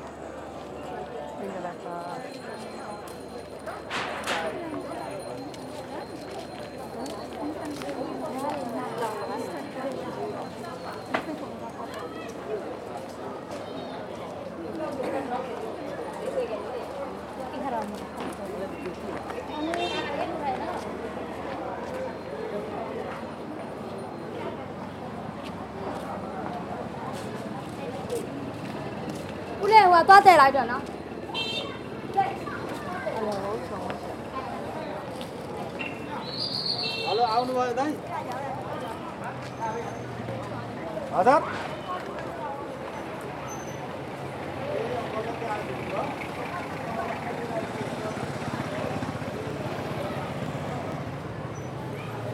Unnamed Road, Maymyo, Myanmar (Birma) - pyin u lwin may myo central market II
pyin u lwin may myo central market II